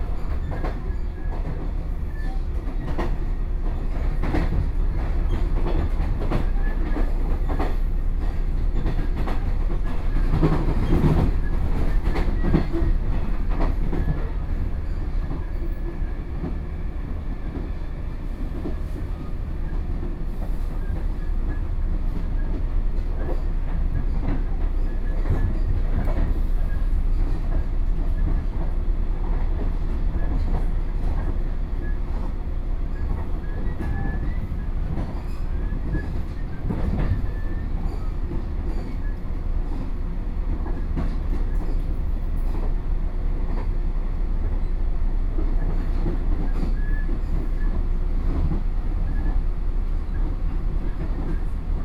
Yangmei City, Taoyuan - In a local train
In a local train, on the train, Binaural recordings
18 May, 06:07